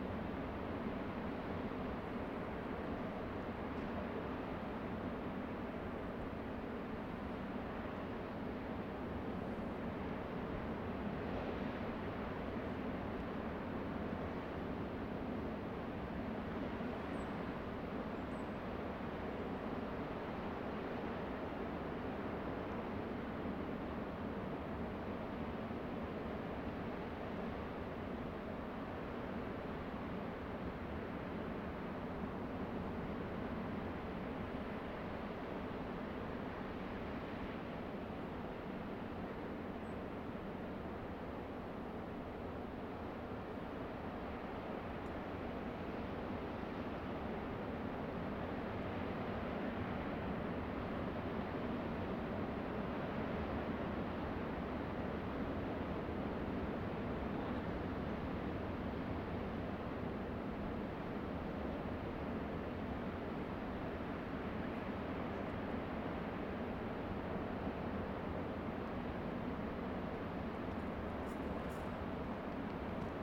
Corral, Chili - AMB CHAIHUÍN LARGE OCEAN SHORE FAR AIRY MS MKH MATRICED
This is a recording up from a hill located in Chaihuín. Mics are pointed towards the ocean. I used Sennheiser MS microphones (MKH8050 MKH30) and a Sound Devices 633.
Región de Los Ríos, Chile